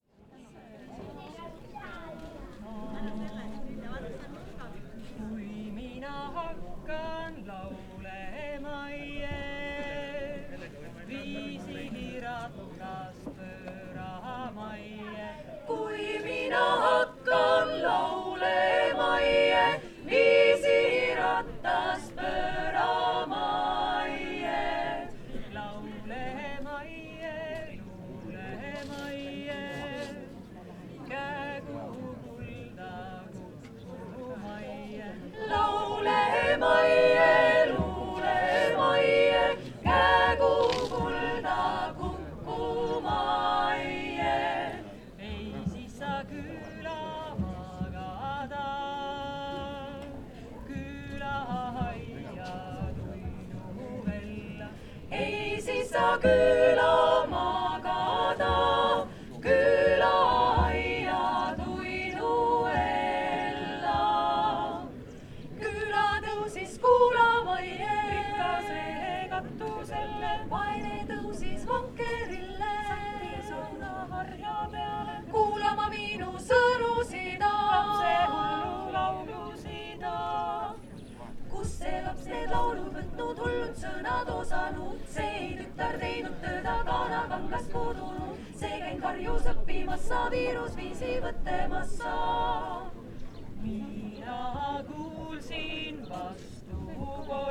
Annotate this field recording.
open stage at mooste theater, outside. women choir singing